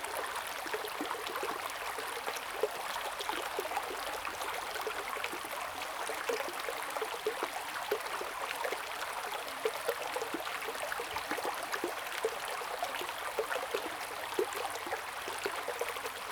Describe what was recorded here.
Sound of water, Small streams, Streams and Drop, Flow sound, Zoom H2n MS+XY